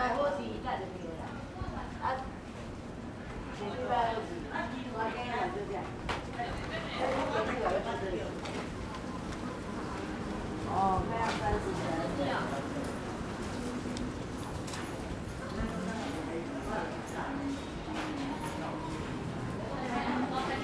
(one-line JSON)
{"title": "Fuxing St., Yonghe Dist., New Taipei City - Walking in the alley", "date": "2011-12-19 17:23:00", "description": "Walking in the alley, a small alley, Traffic Sound, Traditional Market\nZoom H4n + Rode NT4", "latitude": "25.01", "longitude": "121.52", "altitude": "15", "timezone": "Asia/Taipei"}